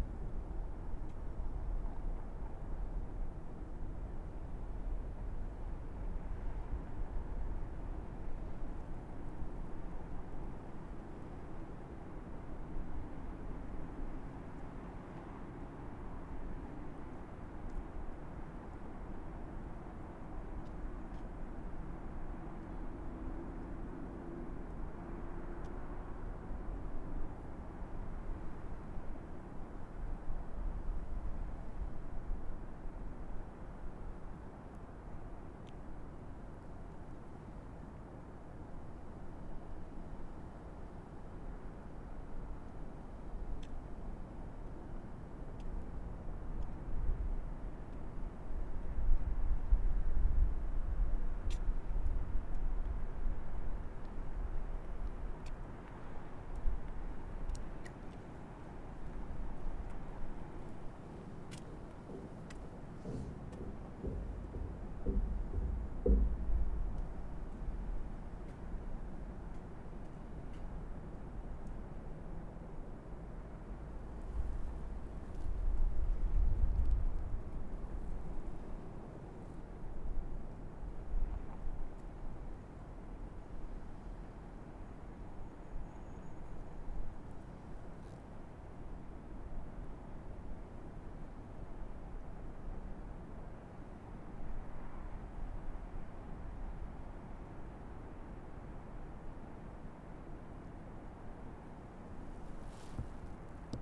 {"title": "Art Building, N Riverside Dr, Iowa City, IA, USA - Iowa Memorial Union Bridge", "date": "2022-01-23 14:47:00", "description": "Audio recorded on the bridge outside of the Iowa Memorial Union facing south towards the river. Recorded on H5N Zoom", "latitude": "41.66", "longitude": "-91.54", "altitude": "197", "timezone": "America/Chicago"}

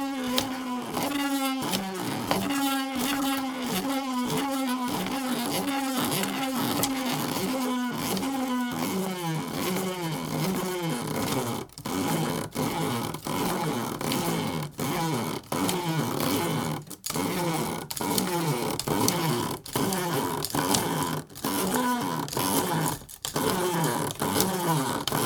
{"title": "London Borough of Tower Hamlets, Greater London, UK - Rachael's creaky swift", "date": "2008-05-05 15:00:00", "description": "This is one of the devices used to turn unwieldy great skeins of yarn into neat balls that you can knit from. It looks sort of like an upside-down umbrella, and stretches to accommodate your skein, so that it may be wound off under tension.", "latitude": "51.53", "longitude": "-0.05", "altitude": "17", "timezone": "Europe/London"}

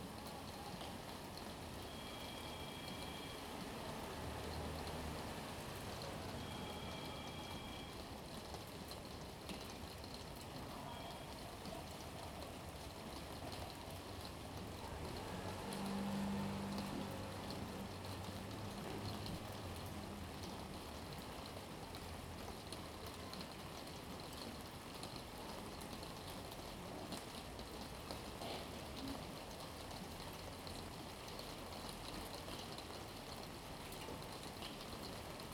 {"title": "Courtyard, Vila de Gràcia, Barcelona, Spain - Rain, June 16th 2015", "date": "2015-06-16 19:15:00", "latitude": "41.40", "longitude": "2.16", "altitude": "75", "timezone": "Europe/Madrid"}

